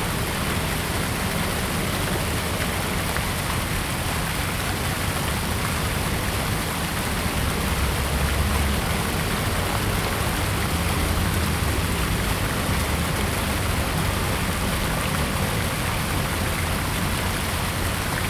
Sec., Ren'ai Rd., Da'an Dist. - Fountain
Fountain, Traffic Sound
Zoom H2n MS+XY